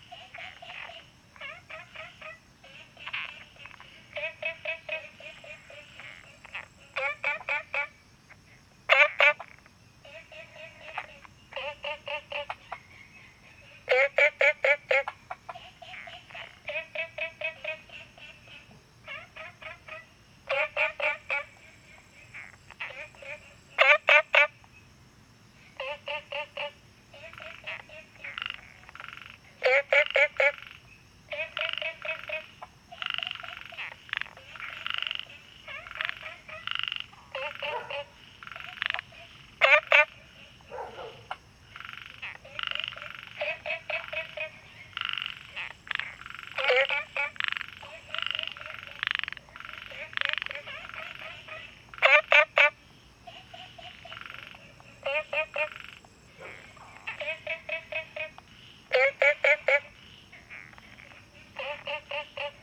青蛙ㄚ婆ㄟ家, Puli Township, Nantou County - A variety of frog sounds
A variety of frog sounds
Zoom H2n MS+XY